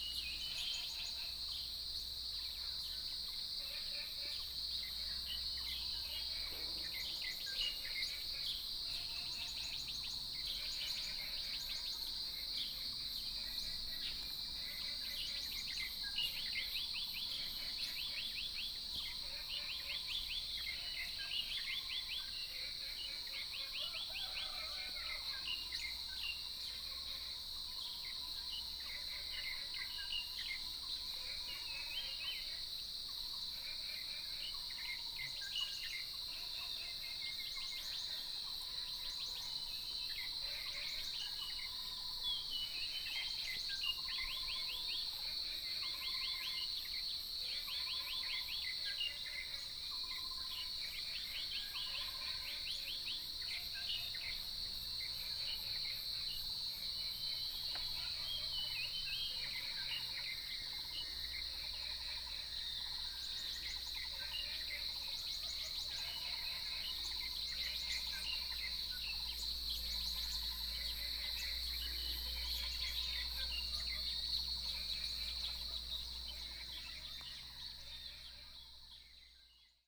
種瓜路4-2號, 桃米里 Puli Township - Early morning
Birdsong, Chicken sounds, Frogs chirping, Early morning
Nantou County, Taiwan, June 2015